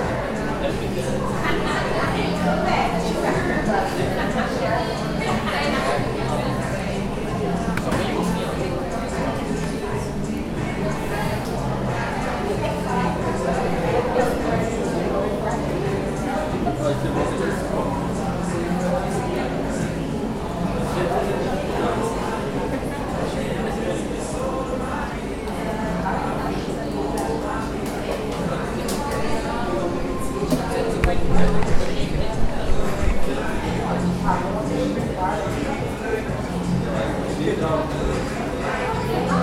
R. Dr. Almeida Lima - Mooca, São Paulo - SP, 03164-000, Brasil - REAL Starbucks audio
cafeteria starbucks anhembi morumbi mooca
São Paulo - SP, Brazil, April 2019